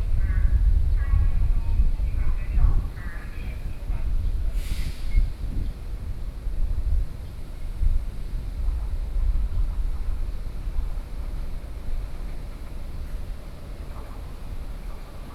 Fugang Station, Taoyuan County - platform
waiting in the platform, Sony PCM D50+ Soundman OKM II